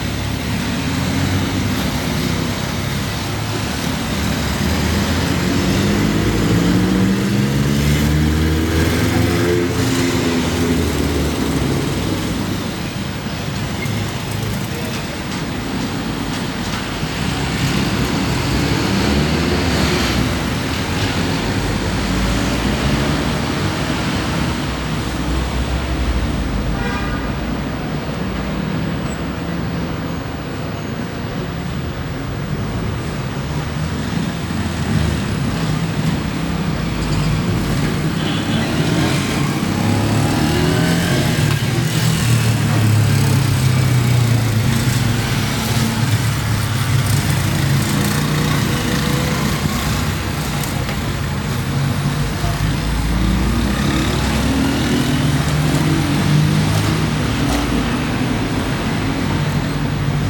Urban soundscape in the morning of the pontevedra neighborhood in the city of Bogota, where you can hear the sound of the wind and traffic, where you can hear the sounds of cars and motorcycles.
You can also hear the sound of street vendors and some voices of passersby.
Colombia, 10 November 2021